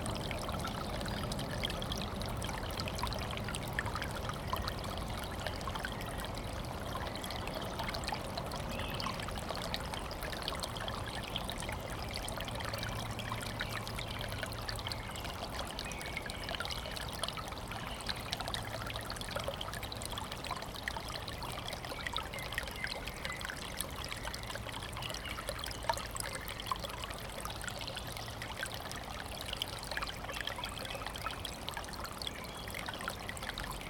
The sound of a stream flowing out of a spring near Voronino is heard. You can also hear the singing of birds and cars passing nearby.
Recorded on Zoom H2n
Центральный федеральный округ, Россия, 25 April 2021, 19:26